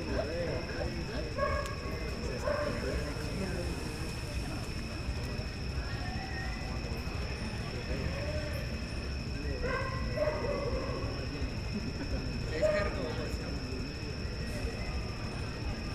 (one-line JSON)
{"title": "Cl., Medellín, Belén, Medellín, Antioquia, Colombia - Parque Los Alpes", "date": "2022-09-05 09:40:00", "description": "Toma de audio / Paisaje sonoro del parque Los Alpes grabada con la grabadora Zoom H6 y el micrófono XY a 120° de apertura en horas de la noche. Se pueden escuchar algunas personas hablando, los sonidos de la naturaleza de manera tenue, la música de un parlante que se encontraba a unos metros del punto de grabación y el silbido de una persona llamando a su perro en algunas ocasiones.\nSonido tónico: Naturaleza y personas hablando\nSeñal sonora: Silbido", "latitude": "6.23", "longitude": "-75.61", "altitude": "1554", "timezone": "America/Bogota"}